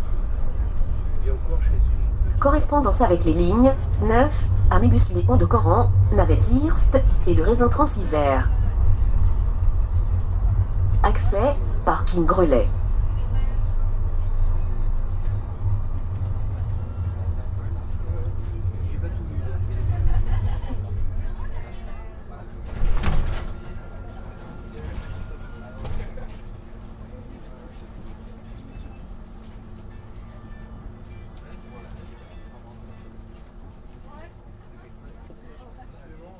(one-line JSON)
{"title": "Agn s at work Grand Sablon RadioFreeRobots", "latitude": "45.20", "longitude": "5.75", "altitude": "218", "timezone": "GMT+1"}